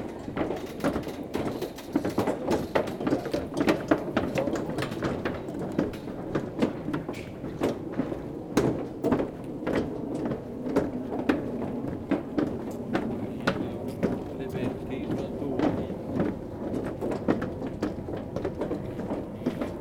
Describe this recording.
Following a person walking on the very pleasant pontoon, made in wood, over the Dijle river. Far away, the (also) pleasant sound of the OLV-over-de-Dijlekerk carillon.